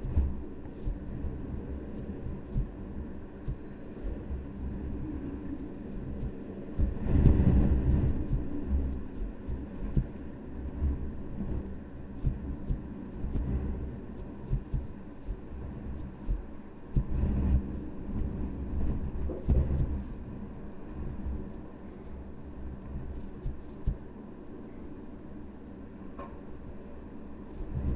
wind tumbling in the air vent. the dust filter detached itself from the grating and is flapping back and forth.